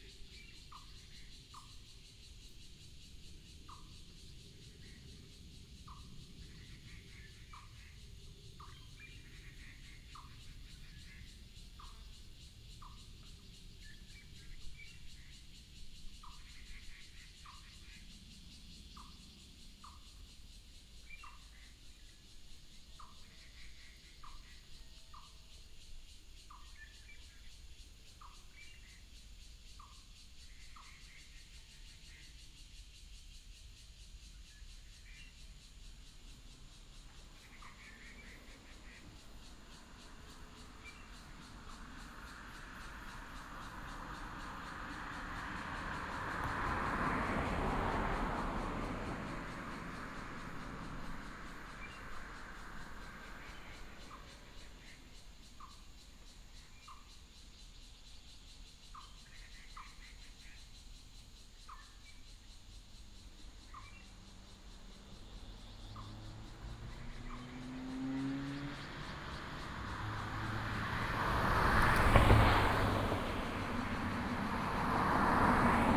北岸道路, Touwu Township, Miaoli County - Next to the reservoir
Next to the reservoir, Traffic sound, The sound of birds, The sound of the plane, Binaural recordings, Sony PCM D100+ Soundman OKM II